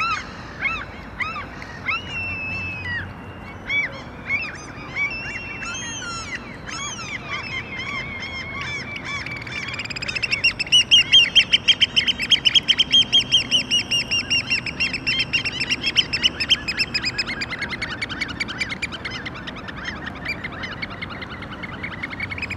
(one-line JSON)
{"title": "night sounds in boat marina, Helsinki", "date": "2011-06-12 00:20:00", "description": "recorded during the emporal soundings workshop", "latitude": "60.18", "longitude": "24.91", "timezone": "Europe/Helsinki"}